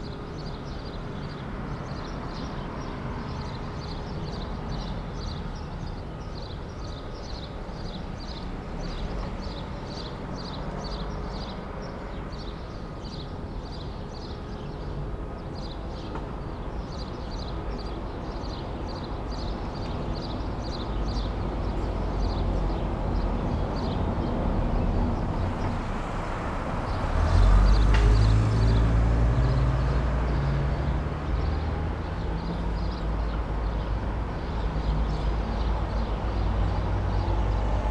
Berlin: Vermessungspunkt Friedelstraße / Maybachufer - Klangvermessung Kreuzkölln ::: 17.05.2011 ::: 18:03
Berlin, Germany